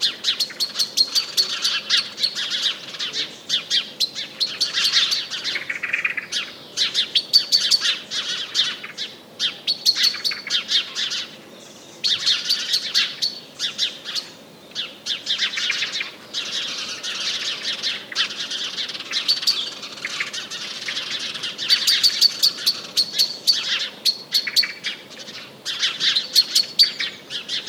Chemin des Ronferons, Merville-Franceville-Plage, France - Birds during the pandemic
From the window, birds during the covid-19 pandemic, Zoom H6 & Rode NTG4+
Normandie, France métropolitaine, France, 20 April, 12:08